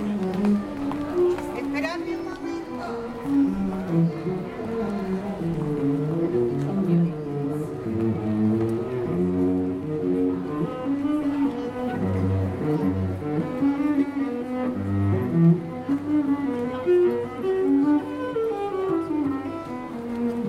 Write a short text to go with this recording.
Tastevere, Rome. Walk toward the Vatican past restaurants and a cellist up to a man who says, "Prego." 8:00 PM 15 Sept 2012. Zoom H2n.